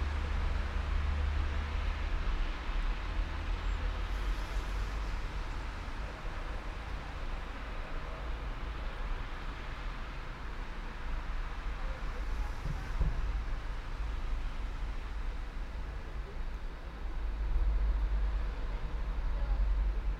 all the mornings of the ... - feb 13 2013 wed